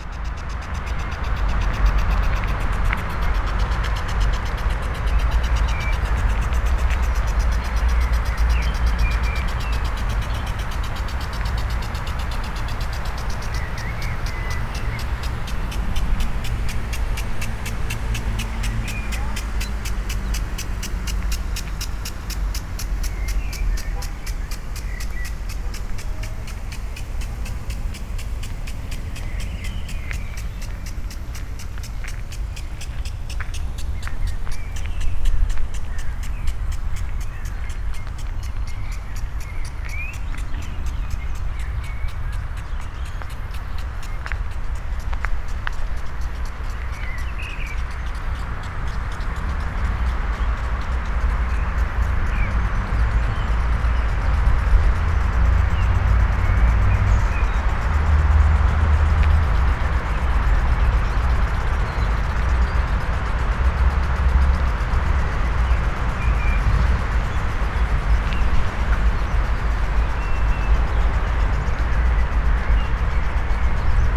botanischer garten, Berlin, Germany - irrigation
traffic noise, birds, sandy pathway
16 May 2013, 11:47